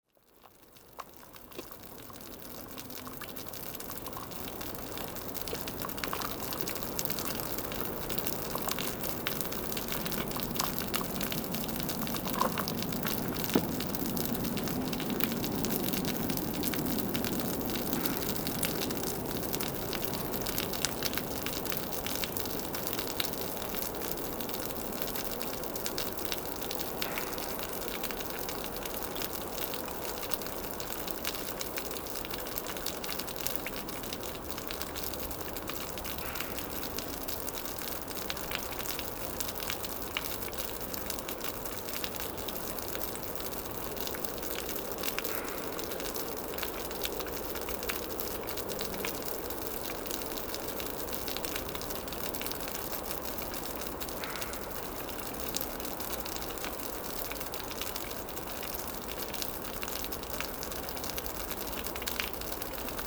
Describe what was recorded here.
A long and sad rain befall on the gloomy land.